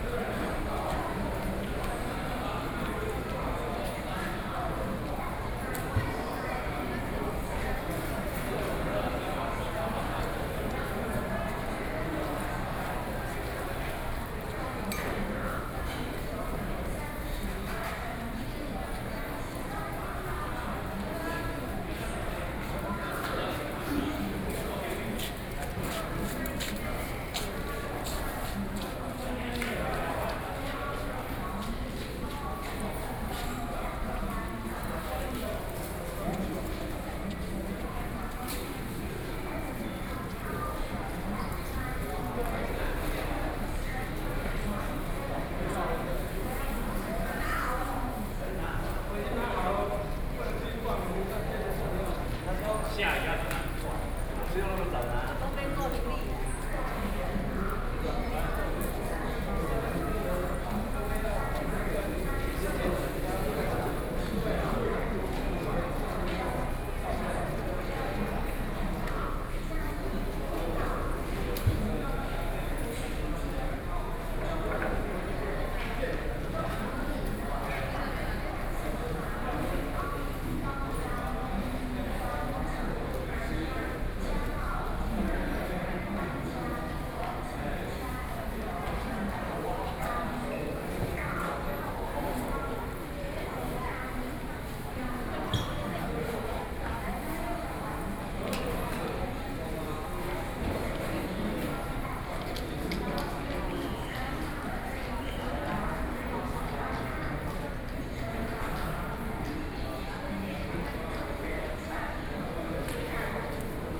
Chiayi Station, Chiayi City - Station hall
in the Station hall, Sony PCM D50 + Soundman OKM II